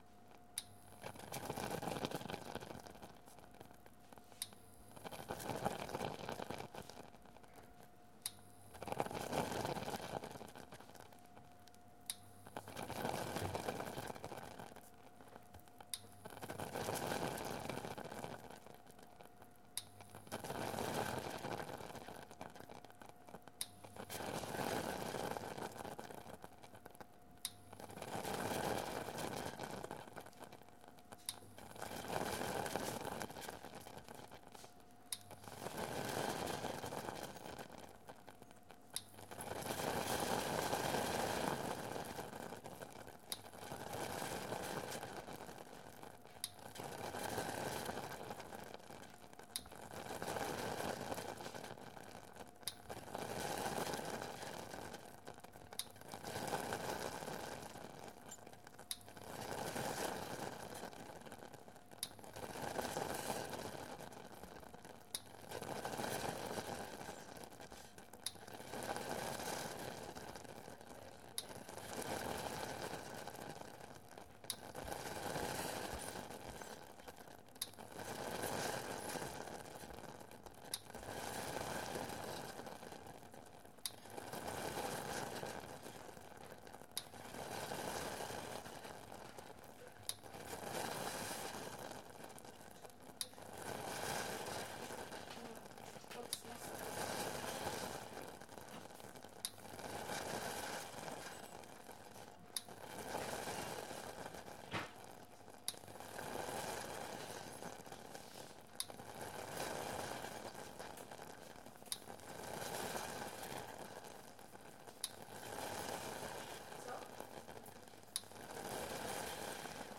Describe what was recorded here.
cooking a pot of oatmeal on an inductive stove.